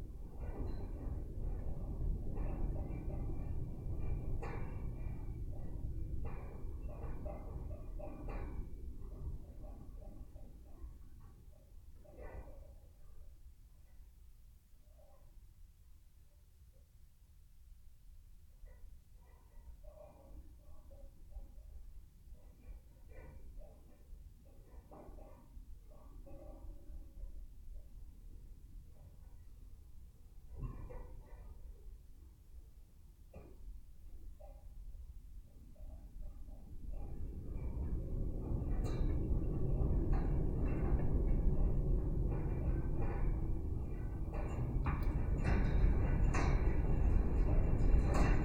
Lone Wolf Trail, Ballwin, Missouri, USA - Castlewood Ruin Fence
Dual contact microphone recording from a chain link fence surrounding a concrete ruin in Castlewood State Park off Lone Wolf Trail. In the 1920s this area along the Meramec River was an extremely popular summer resort destination. The park contains many concrete ruins from that time. The Lone Wolf Trail was named for the former Lone Wolf Club, an area speakeasy during Prohibition.
Missouri, United States, 28 January, 15:38